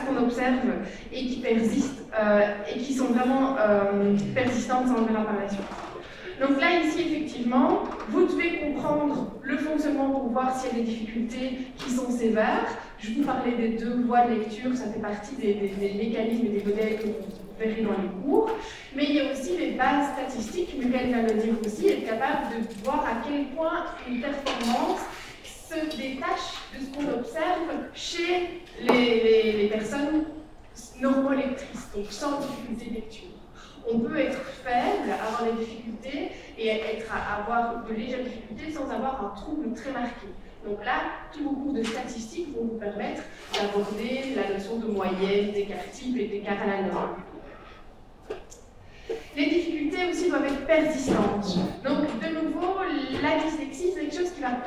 Centre, Ottignies-Louvain-la-Neuve, Belgique - Psychology course
In the huge Socrate auditoire 41, a course of psychology, with to professors talking. Audience is dissipated.
Place Cardinal Mercier, Ottignies-Louvain-la-Neuve, Belgium, 23 March